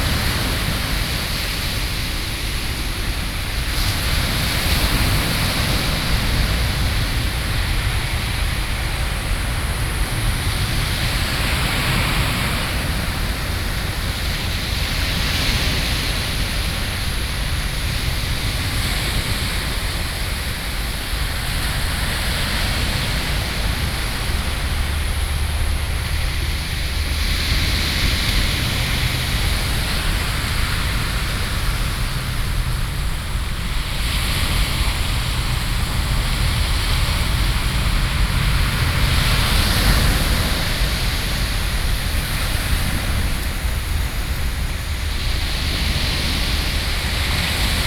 Laomei, Shimen Dist., New Taipei City - The sound of the waves